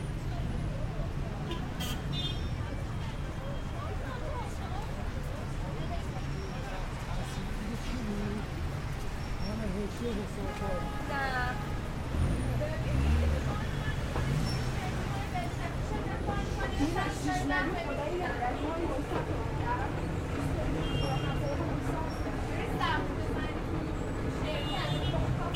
{"title": "Tehran Province, Tehran, Tajrish Square, Iran - Tajrish square", "date": "2016-06-09 13:15:00", "description": "Recorded with a zoom h6 recorder.\nI was circling around the square.", "latitude": "35.81", "longitude": "51.43", "altitude": "1612", "timezone": "Asia/Tehran"}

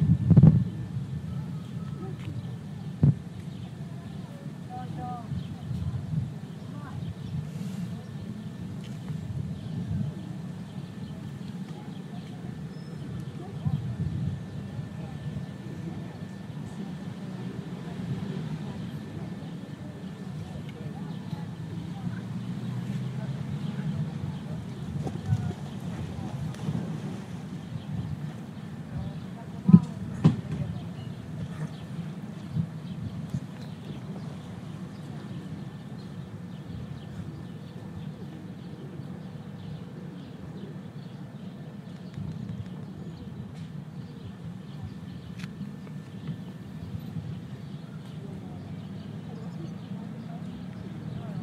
{"title": "Zemunski kej, Zemun, Belgrade - Zemunski kej (Danube Riverside)", "date": "2011-06-14 15:56:00", "latitude": "44.84", "longitude": "20.42", "altitude": "74", "timezone": "Europe/Belgrade"}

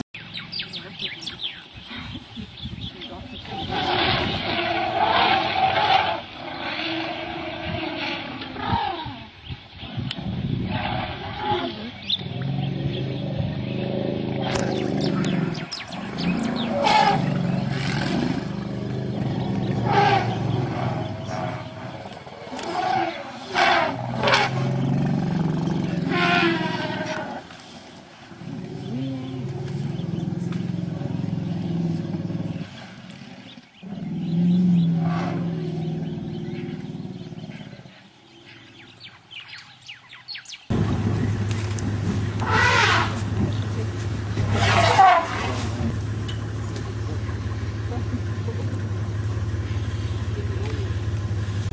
{"title": "Tuli, Botswana, Elephants trumpeting and Rumbling", "date": "2007-06-18 14:17:00", "description": "A herd of African elephants trumpet, growl and rumble.", "latitude": "-21.72", "longitude": "29.04", "altitude": "635", "timezone": "Africa/Harare"}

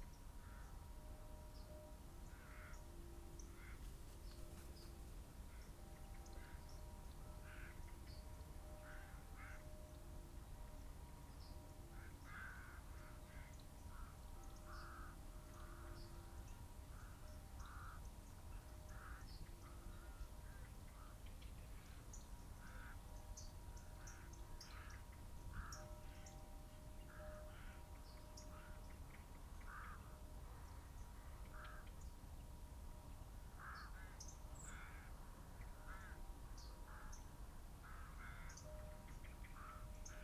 {"title": "Luttons, UK - corvids and raptors soundscape ...", "date": "2016-12-18 08:30:00", "description": "Corvids and raptors soundscape ... bird calls ... buzzard ... peregrine ... crow ... rook ... yellowhammer ... skylark ... blackbird ... open phantom powered lavalier mics clipped to hedgerow ... background noise ...", "latitude": "54.12", "longitude": "-0.56", "altitude": "92", "timezone": "Europe/London"}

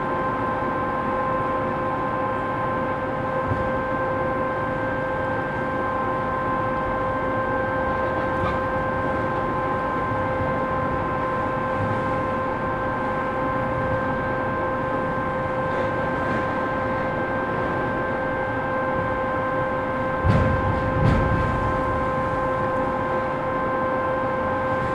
{"title": "berlin: sonnenallee - A100 - bauabschnitt 16 / federal motorway 100 - construction section 16: demolition of a logistics company", "date": "2014-02-18 14:02:00", "description": "fog cannon produces a curtain of micro droplets that binds dust, noise of different excavators\nthe motorway will pass through this point\nthe federal motorway 100 connects now the districts berlin mitte, charlottenburg-wilmersdorf, tempelhof-schöneberg and neukölln. the new section 16 shall link interchange neukölln with treptow and later with friedrichshain (section 17). the widening began in 2013 (originally planned for 2011) and will be finished in 2017.\nsonic exploration of areas affected by the planned federal motorway a100, berlin.\nfebruary 2014", "latitude": "52.47", "longitude": "13.46", "timezone": "Europe/Berlin"}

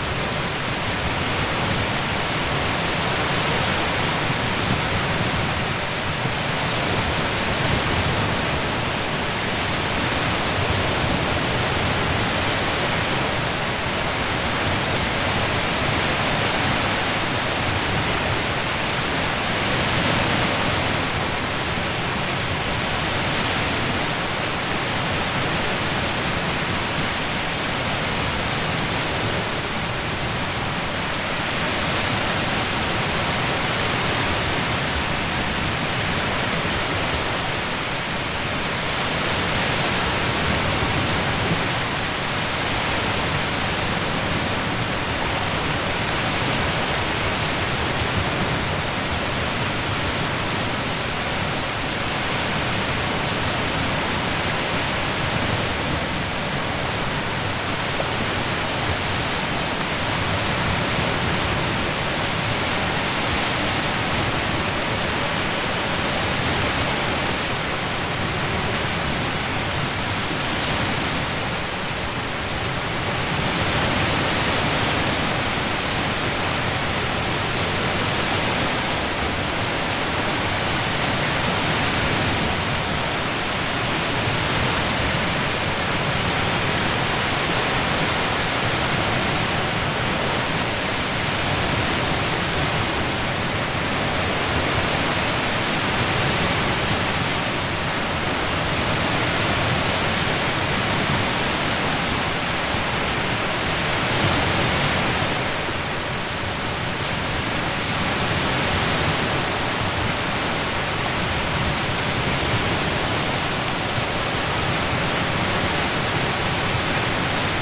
{"title": "Kukuliškiai, Lithuania - Seashore from afar", "date": "2021-07-28 20:18:00", "description": "Baltic sea shore, recorded from the top of a derelict coastal defence battery. Recorded with ZOOM H5 and Rode NTG3b.", "latitude": "55.78", "longitude": "21.07", "altitude": "2", "timezone": "Europe/Vilnius"}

